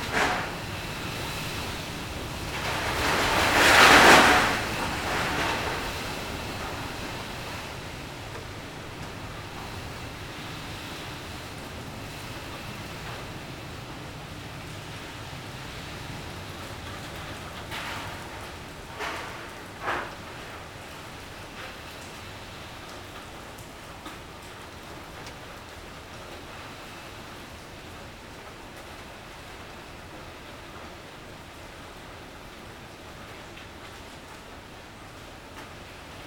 Higashiwakamiyachō, Kamigyō-ku, Kyōto-shi, Kyōto-fu, Japonia - bite of typhoon
recorded during heavy storm on a hotel balcony. building across the street is a parking lot. There are a lot of metal sheets and wires. Wind bending the sheets and roofing of the parking lot as well as swooshing in the wires. (roland r-07)
Kyōto-shi, Kyōto-fu, Japan, 30 September 2018